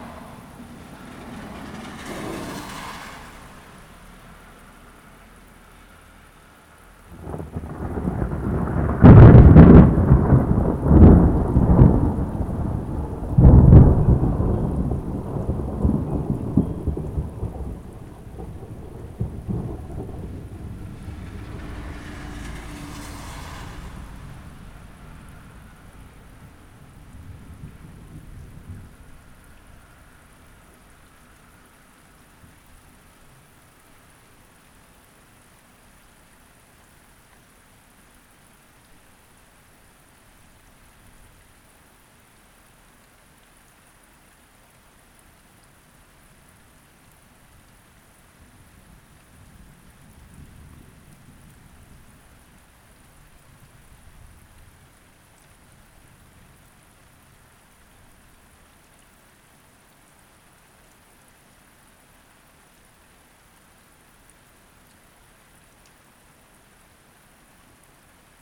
passing thunderstorm ... passing geese ... Olympus LS 12 integral mics ... balanced on window frame ... pink-footed geese very distant at 02.40 - 04.20 ... passing traffic etc ...